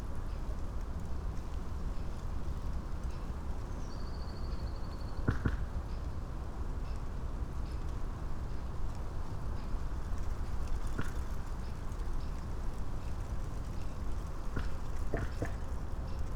two trees, piramida - spring breeze